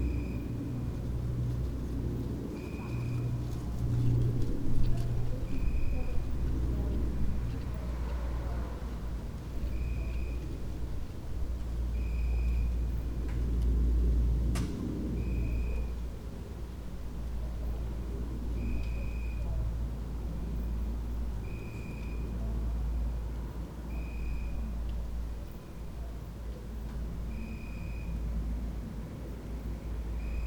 Mladinska, Maribor, Slovenia - midnight cricket, aeroplane